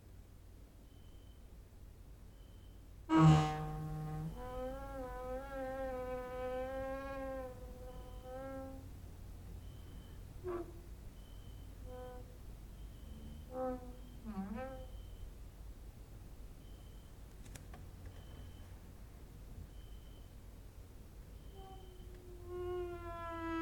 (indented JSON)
{
  "title": "Mladinska, Maribor, Slovenia - late night creaky lullaby for cricket/12",
  "date": "2012-08-19 23:30:00",
  "description": "cricket outside, exercising creaking with wooden doors inside",
  "latitude": "46.56",
  "longitude": "15.65",
  "altitude": "285",
  "timezone": "Europe/Ljubljana"
}